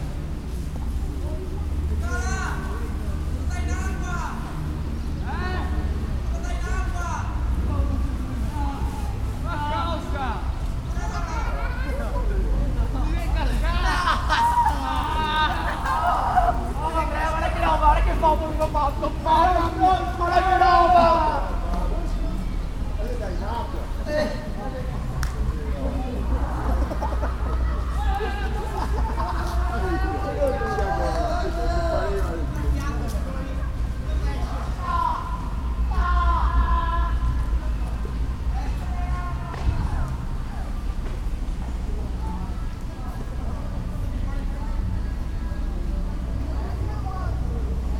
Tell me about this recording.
campo s. pietro, castello, venezia